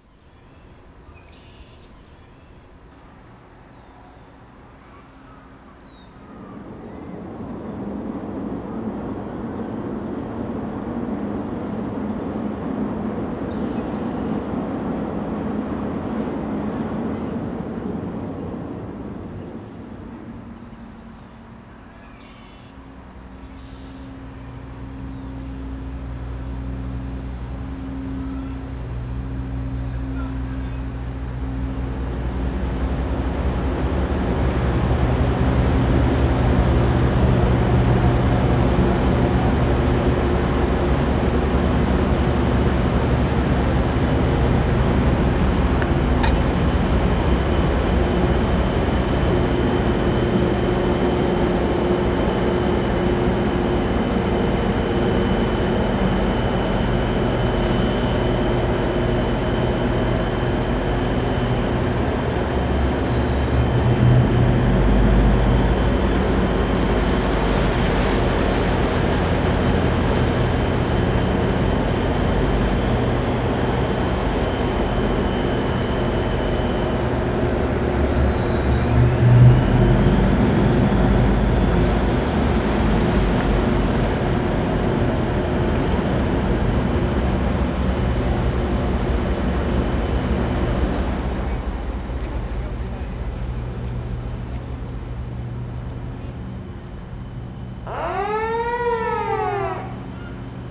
Montreal: Lachine Canal: Through the St. Catherine Lock - Lachine Canal: Through the St. Catherine Lock
This is a condensed piece that comes from a 25-minute recording of a ship passing through the St. Catherine lock of the St. Lawrence Seaway. These are the highlight sounds of the ship going from high to low water, recorded on May 15, 2003. The ships of the Seaway are larger than those in the former Lachine canal, but their movement through the lock is similar.